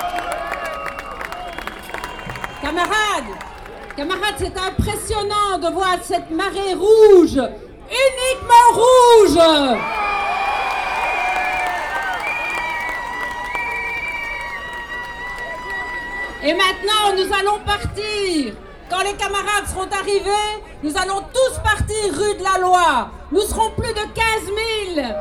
Brussels, Manifestation in front of Electrabel.
Manifestation devant Electrabel.
Brussels, Belgium